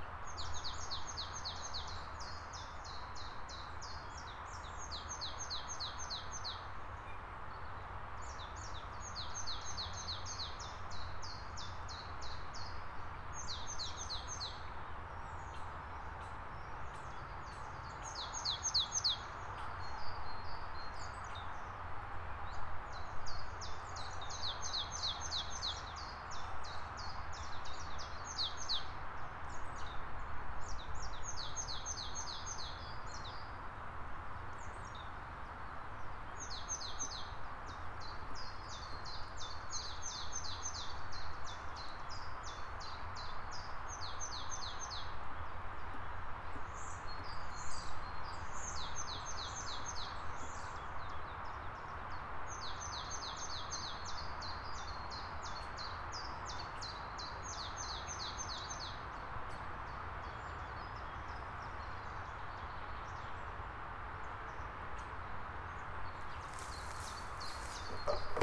Unterer Rheindammweg, Austria - birds, hikers and autobahn
Recorded width a DR-100MKII mounted on tripod. Some hikers went by, I was standing beside the recorder, and a friend walked around nearby. There were also some children playing in the woods. The low noise from the autobahn is always in the background at this otherwise beautiful place. In summer there are a lot of people bathing there, but out of the swimming season, just now and then some hikers come by.
7 April